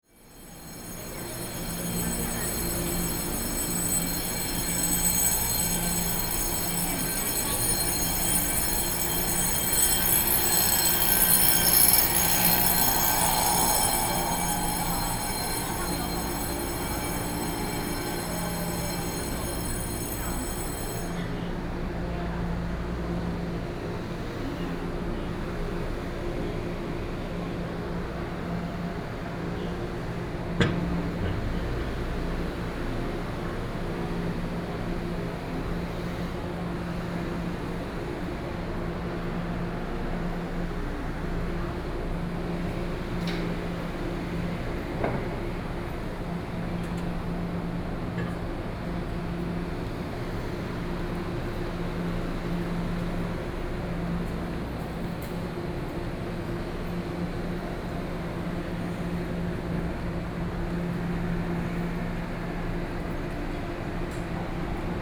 Yilan Station, Yilan City - Walking in the station
From the station platform, Through the underpass, Went to the square outside the station
Sony PCM D50+ Soundman OKM II
Yilan County, Taiwan, 5 July 2014, 08:30